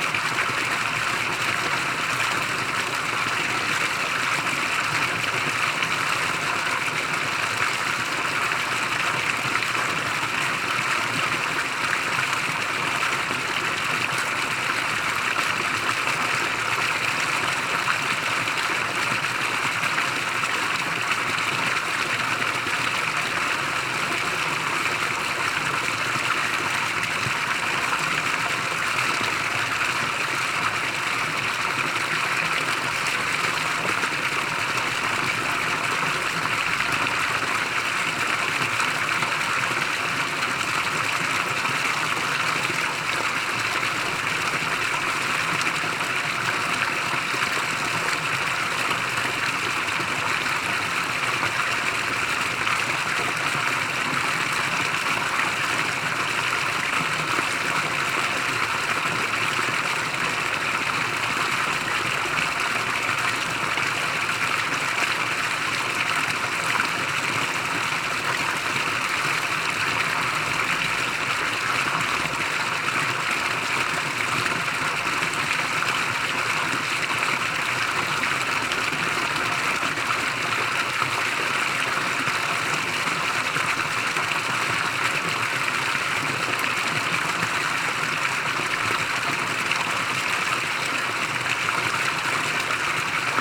Fontaine Place Dugas à Thurins